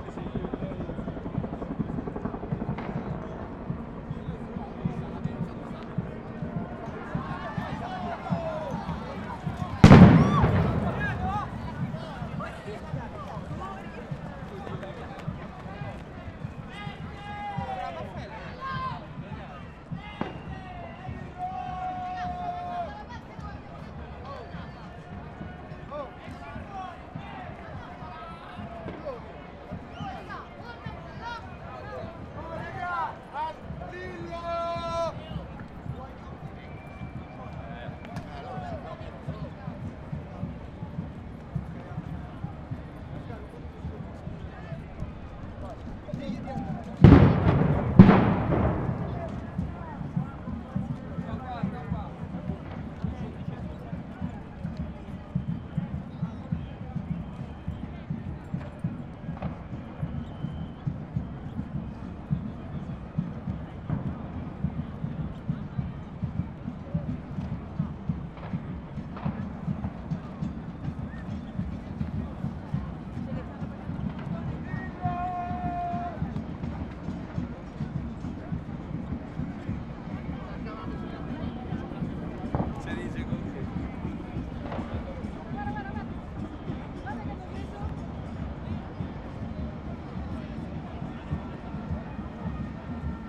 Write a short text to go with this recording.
Rome Riot, The explosions are provoked by demostrants homemade bomb